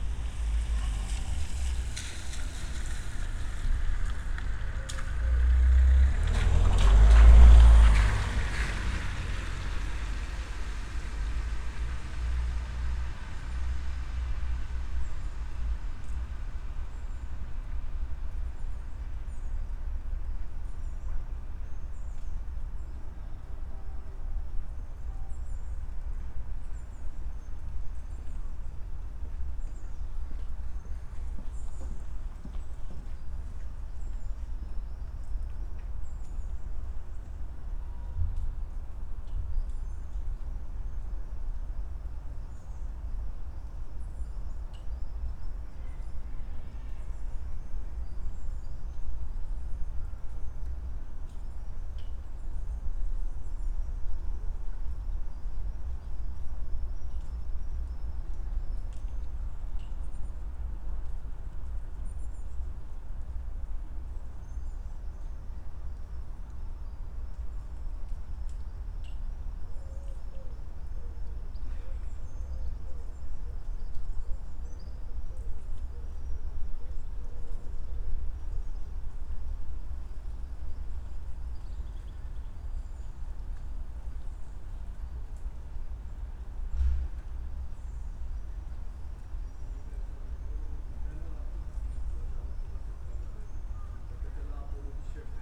Houses, Dublin, Irlande - Trinity at the window
Trinity college
A lovely nice place, after the rain....and summer graduating. The tourists are gone, maybe a few students are still here.
Recording devices : Sound device mix pre 6 + 2 primo EM172 AB 30 cm setup.
County Dublin, Leinster, Ireland, 16 June 2019